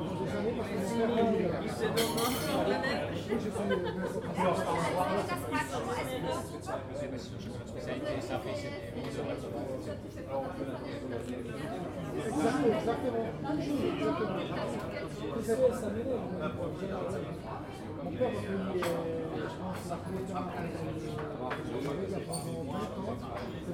Klostergasse, Zentrum, Leipzig, Deutschland - Restaurant 'Cafè Madrid' - Innenhof | restaurant 'Cafè Madrid' - courtyard
Gäste unterhalten sich, Teller klappern, Kellner servieren Essen und Getränke |
conversations among guests, dinnerware rattle, waiter serving food and drinks